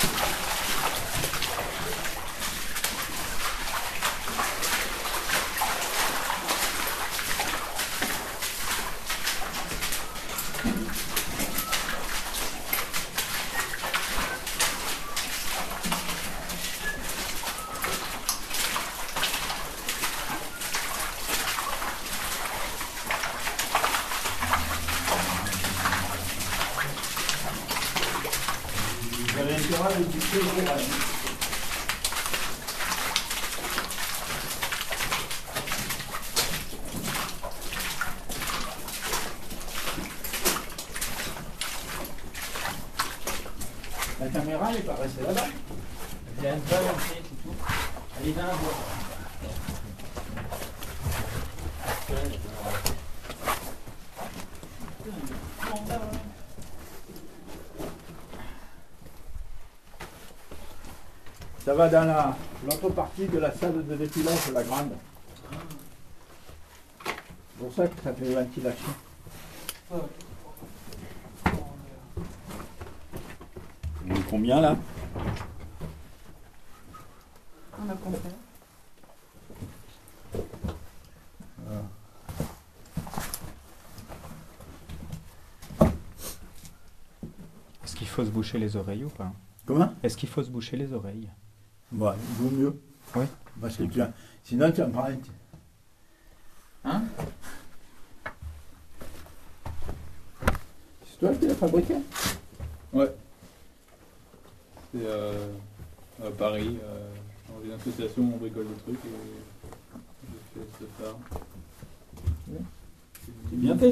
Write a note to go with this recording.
Into the Mazaugues underground bauxite mine, we explode a tunnel with a dynamite stick. It's made in aim to enter in a new cave. A big well is mined, in order to reach the actually impossible to access cave. The explosion has an EXTREMELY high pressure. So, you wont hear a bam, but only the recorder becoming completely lost, because of the sticked sensors.